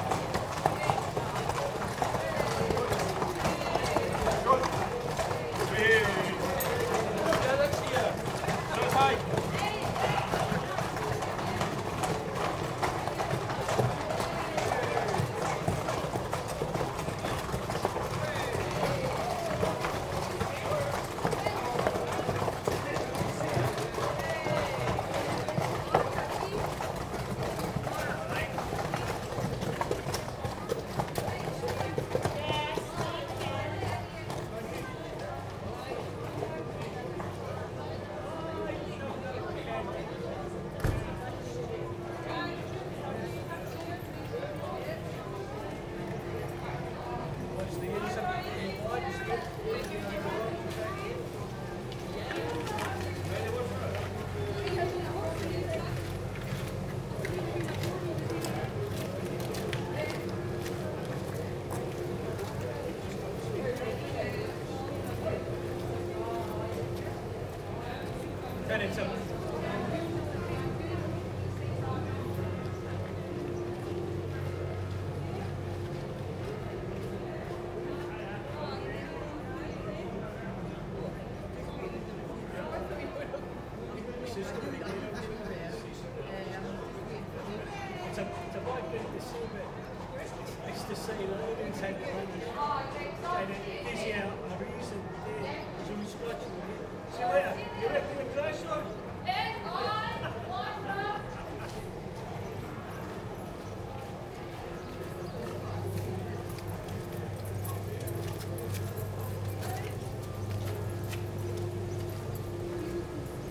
Hawick, Scottish Borders, UK - Hawick Common Riding - mounted horse procession
This is a part of the Hawick Common Riding Festival in which a giant horse procession around the town takes place. In Hawick, they take horse poo very seriously indeed, and in fact immediately after the horses have passed, a massive sweeping machine enters the town to tidy away all the dung IMMEDIATELY.
Recorded with Naiant X-X microphones and Fostex FR-2LE, microphones held at around horse ankle level, about 5m away from the actual horses.
Scotland, United Kingdom, 7 June 2013, 08:30